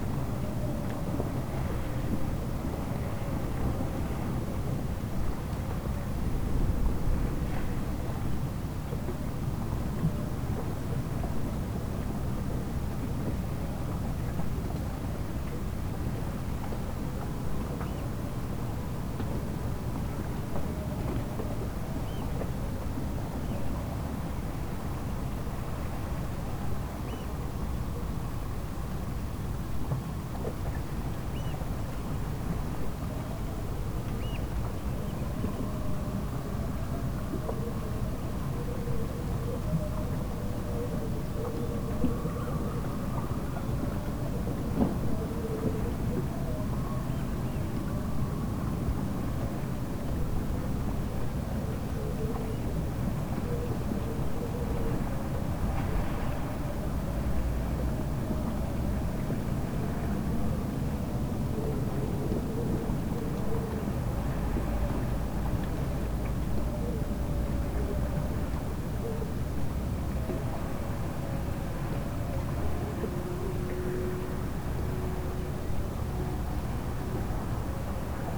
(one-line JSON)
{"title": "lemmer, vuurtorenweg: marina - the city, the country & me: marina", "date": "2011-06-21 11:09:00", "description": "lapping waves, wind blows through sailboat masts and riggings\nthe city, the country & me: june 21, 2011", "latitude": "52.84", "longitude": "5.71", "altitude": "1", "timezone": "Europe/Amsterdam"}